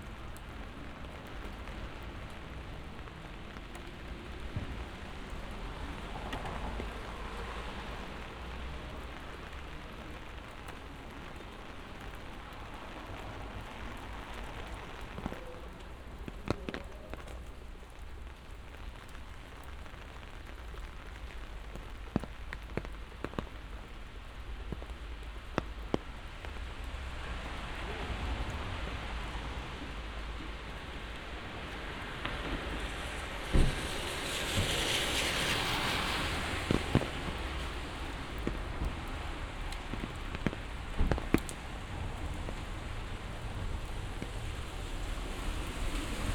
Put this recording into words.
"I’m walking in the rain in the time of COVID19" Soundwalk, Chapter LI of Ascolto il tuo cuore, città. I listen to your heart, city, Monday April 20th 2020. San Salvario district Turin, walking to Corso Vittorio Emanuele II and back, forty one days after emergency disposition due to the epidemic of COVID19. Start at 4:15 p.m. end at 4:43 p.m. duration of recording 28’00”, The entire path is associated with a synchronized GPS track recorded in the (kmz, kml, gpx) files downloadable here: